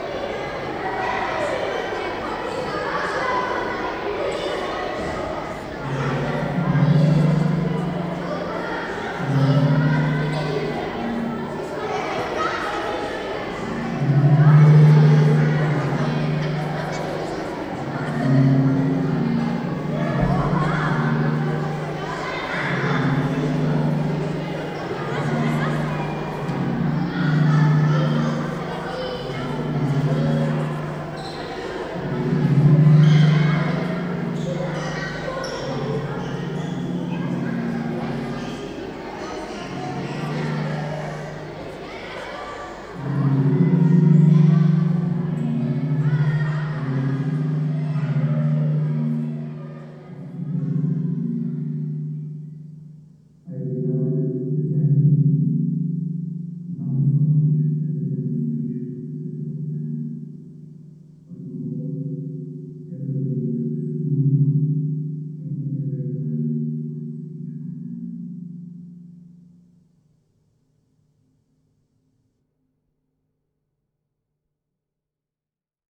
ZKM Museum
children at the intrance hall of the museum and sound of the installation of Alvin Lucier I ma sitting in the room